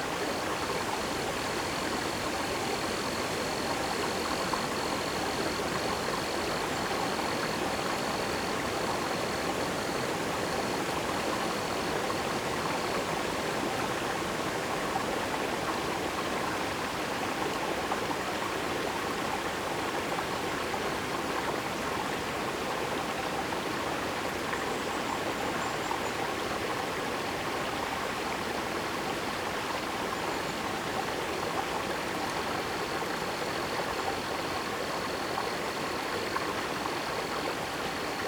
SBG, Les Llobateres - Monte
Ambiente en el monte, en lo alto de la riera de Les Llobateres.